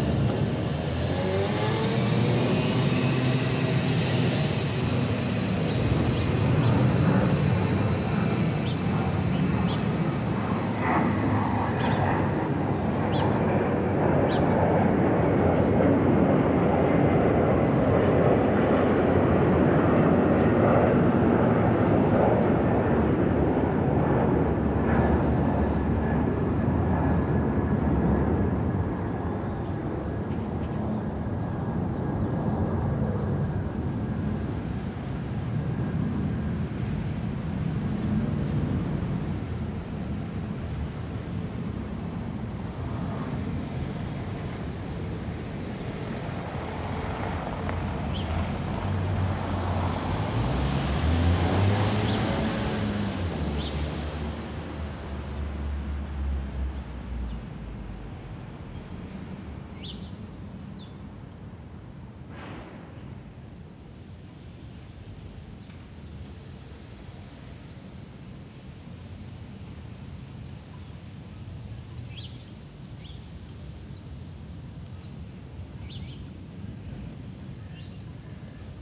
Fordoner Straße, Berlin - small square, passers-by, airplanes. Residing next to an allotment site and next to the green belt which girds the small stream Panke, this place lies in a forgotten corner of Soldiner Kiez. If there weren't airplanes overflying every three minutes and if it were not so strewn with litter, it could even be called peaceful.
[I used the Hi-MD-recorder Sony MZ-NH900 with external microphone Beyerdynamic MCE 82]
Fordoner Straße, Berlin - kleiner Platz, Passanten, Flugzeuge. Zwischen einer Kleingartenanlage und dem Grüngürtel entlang der Panke gelegen bildet dieser Platz einen vergessenen Winkel im Soldiner Kiez. Wären da nicht die Flugzeuge, die alle drei Minuten darüber hinwegziehen, und wäre der Platz nicht ganz so vermüllt, könnte man die Atmosphäre fast als friedlich beschreiben.
[Aufgenommen mit Hi-MD-recorder Sony MZ-NH900 und externem Mikrophon Beyerdynamic MCE 82]
Berlin, Germany, 12 October 2012, 13:30